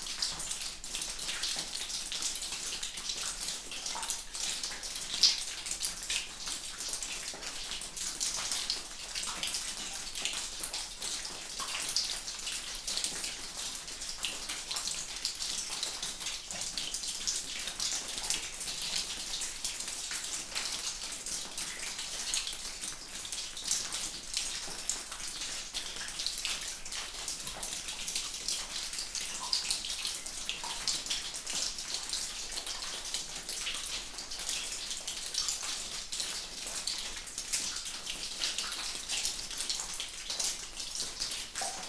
Unnamed Road, Kostanjevica na Krasu, Slovenia - Droplest in a cave
This was recorded in so called Russian cave. Recorded with MixPre II and LOM Uši Pro, AB Stereo Mic Technique, 50cm apart.
History:
RUSSIAN CAVE
There is a multitude of natural karst caves in the area of the Kras. During the time of the Isonzo Front, several of them were arranged by the caving-and-construction detachment of the Corps VII of the Austro-Hungarian Army to serve military purposes. One of the caves that was initially used for ammunition storage was subsequently called the Russian Cave, because later on, the Russian POWs were lodged in it. They had to live there in unbearable conditions.
According to the estimation of historians, about 40,000 Russian POWs, captured on the Eastern Front, were present during the First World War on the territory of present-day Slovenia. About 15,000-20,000 of them were confined on the broader area of the Kras.
23 January 2021, 12:33